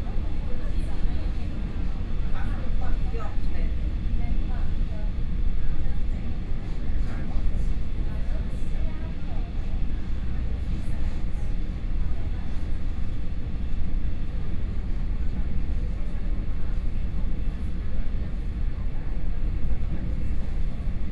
Sanyi Township, Taiwan - Local Train
from Tongluo Station to Tai'an Station, Binaural recordings, Zoom H4n+ Soundman OKM II